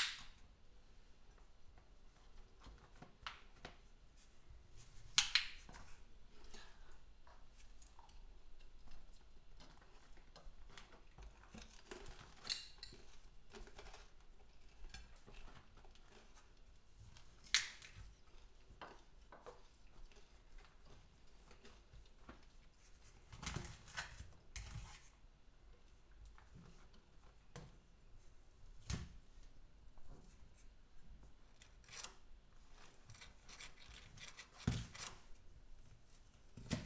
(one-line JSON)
{"title": "Poznan, Mateckiego street, kitchen - wall drilling & sandwich making duet", "date": "2014-03-29 16:31:00", "description": "recording in the kitchen. neighbors doing renovations, drilling holes, hammering and chiseling on the other side of a wall. groceries unpacking and making a sandwich sounds on our side.", "latitude": "52.46", "longitude": "16.90", "altitude": "97", "timezone": "Europe/Warsaw"}